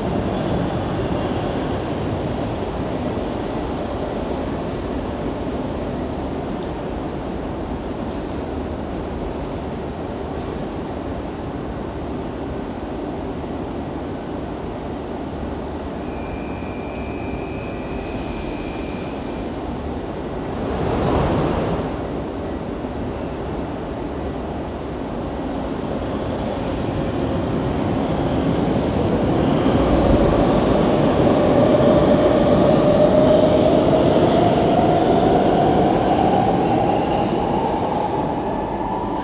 Anderlecht, Belgium - Underground in Jacque Brel metro station; train and music
Brussels Metro stations play music (usually very bland). It's a unique characteristic of the system. It's always there, although often not easy to hear when drowned out by trains, people and escalator noise. But when they all stop it is quietly clear.
15 October, 15:45